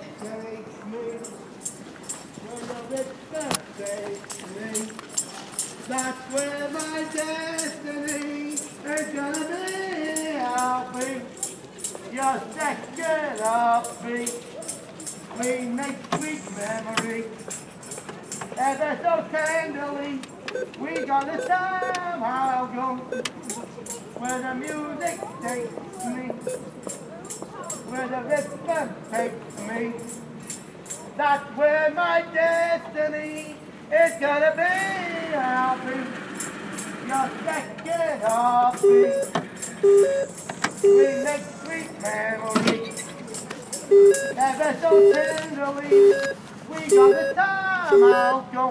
City Centre, Sheffield, South Yorkshire, UK - Singing ATM
Taking out some money next to a very skillful street musician.